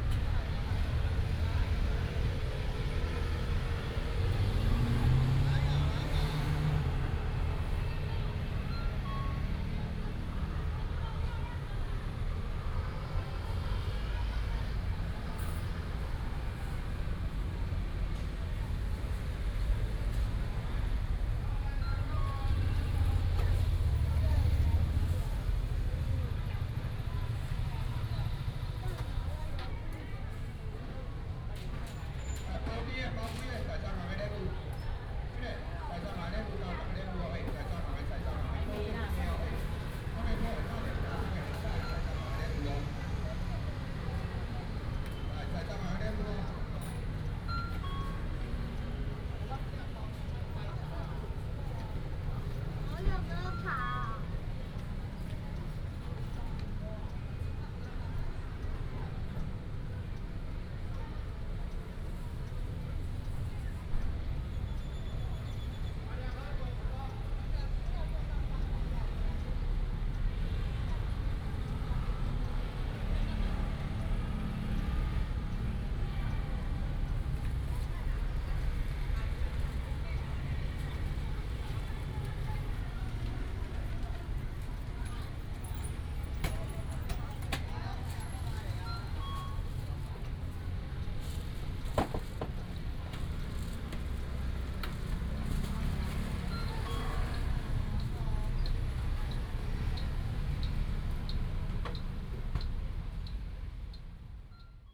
Zhongshan Rd., Houlong Township 苗栗縣 - At the intersection
In front of the convenience store, At the intersection, Traffic sound, Market sound
Houlong Township, Miaoli County, Taiwan, 24 March 2017, ~10:00